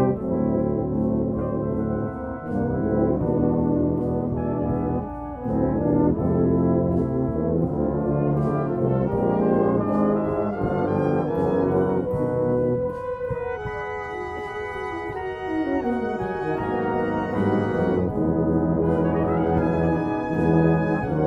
neoscenes: Anzac Day Salvation Army Band
Sydney NSW, Australia